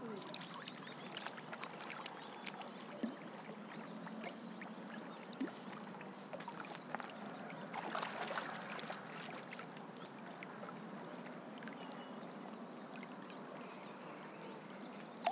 Walking Holme Dog Bath
A dog enjoying the water and a loving owner not wanting to get wet.